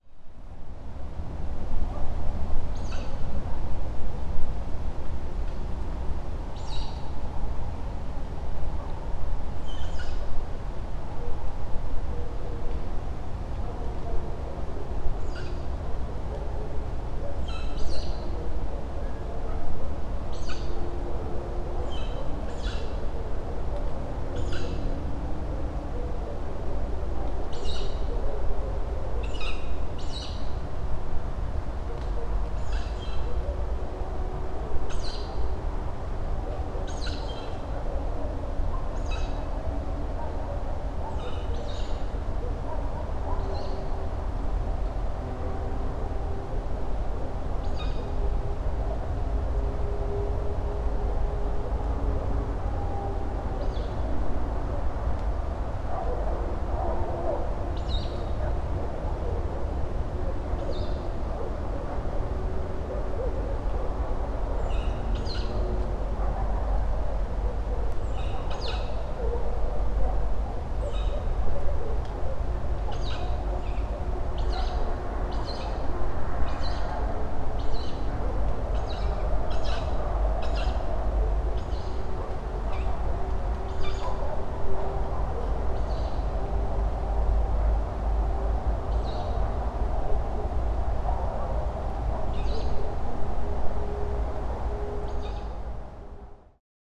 Zoom H4n, calling chick. 3rd World Listening Day.